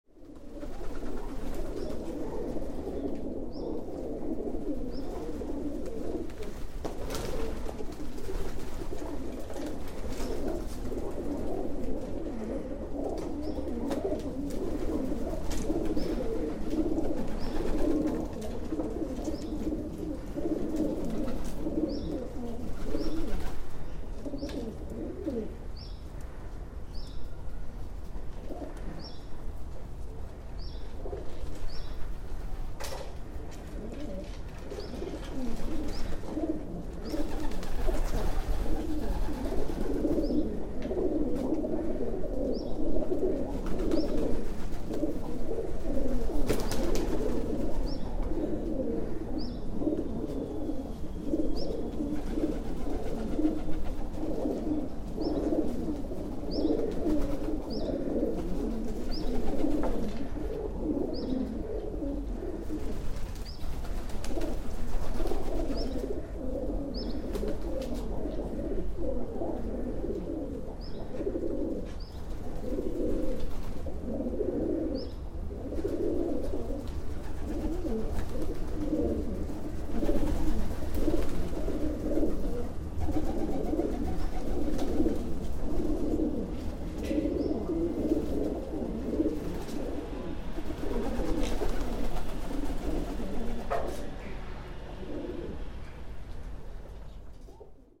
Recorded with a Tascam DR-700 in a Discesa alla Piazzetta, APM PLAY IN workshop 2016. Third Day
Saluzzo CN, Italy